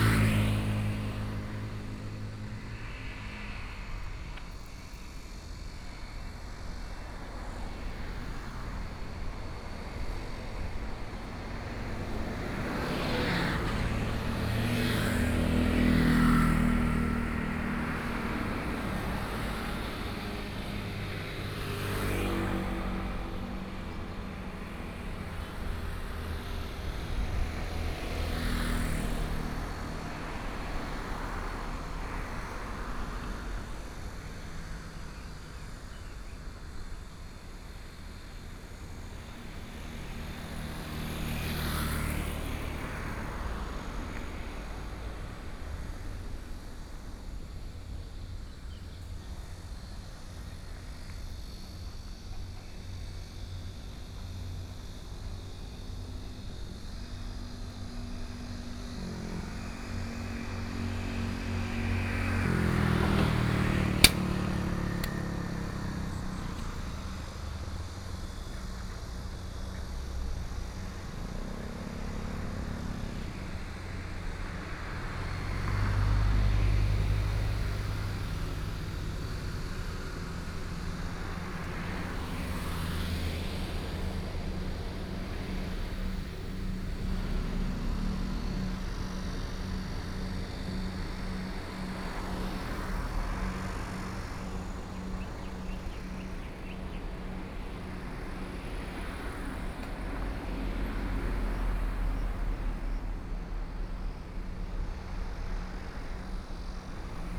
Linsen Rd., Yilan City - walking on the Road
walking on the Road, Traffic Sound, Hot weather
Sony PCM D50+ Soundman OKM II
Yilan City, Yilan County, Taiwan, 5 July 2014